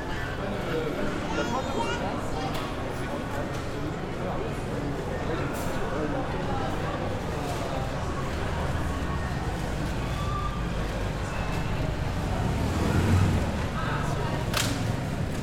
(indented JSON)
{
  "title": "Grand marché d, Ajaccio, France - Grand marché",
  "date": "2022-07-27 12:10:00",
  "description": "Market Sound\nCaptation : ZOOM H6",
  "latitude": "41.92",
  "longitude": "8.74",
  "altitude": "3",
  "timezone": "Europe/Paris"
}